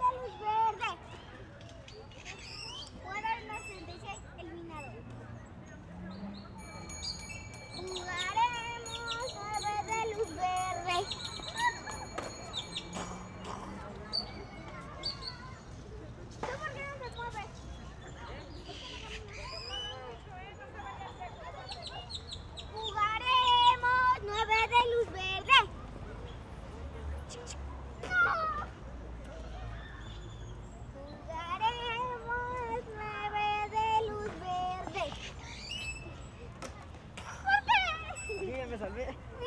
Cholula
dans le jardin public, ambiance "1-2-3 soleil..."